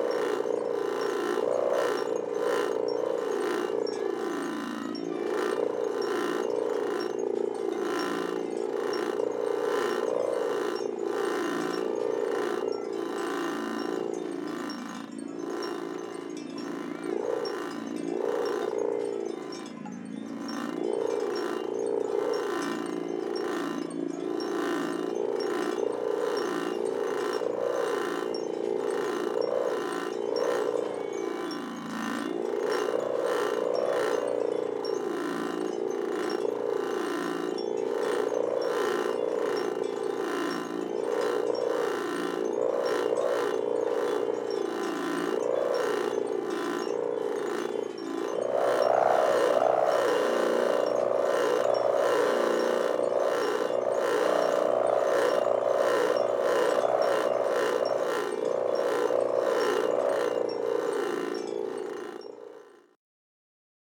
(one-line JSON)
{"title": "Harmonic Fields, Final -vertical straps", "date": "2011-06-05 13:01:00", "description": "Stands with a vertical pair of straps tensioned across the wind.\nLakes Alive brought French artist and composer, Pierre Sauvageot (Lieux Publics, France) to create an interactive musical soundscape on Birkrigg Common, near Ulverston, Cumbria from 3-5 June 2011.\n500 Aeolian instruments (after the Greek god, Aeolus, keeper of the wind) were installed for 3 days upon the Common. The instruments were played and powered only by the wind, creating an enchanting musical soundscape which could be experienced as you rested or moved amongst the instruments.\nThe installation used a mixture of traditional and purpose built wind instruments. For example metal and wood wind cellos, long strings, flutes, Balinese paddyfield scarecrows, sirens, gongs, drums, bells, harps and bamboo organs. They were organised into six movements, each named after a different wind from around the world.", "latitude": "54.16", "longitude": "-3.10", "altitude": "131", "timezone": "Europe/London"}